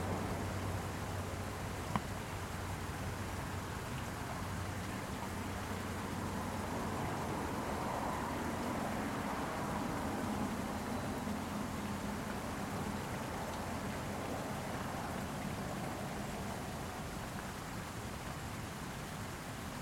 Headwaters of the River Des Peres, St. Louis, Missouri, USA - River Des Peres Headwaters
Headwaters of the River Des Peres
Missouri, United States